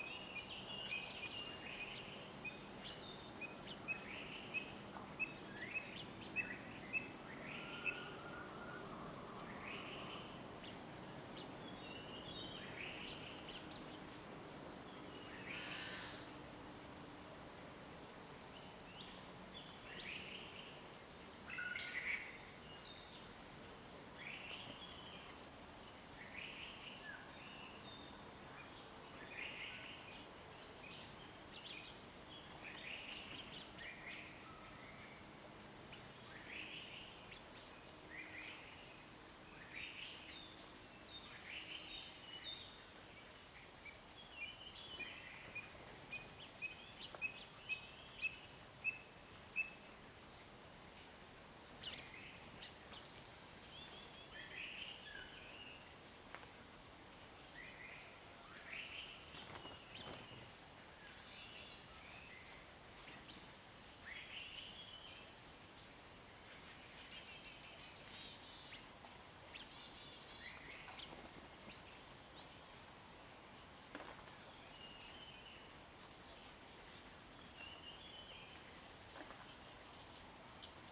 水上巷, 埔里鎮桃米里, Nantou County - Morning in the mountains
Morning in the mountains, Bird sounds, Traffic Sound, Frogs chirping
Zoom H2n MS+XY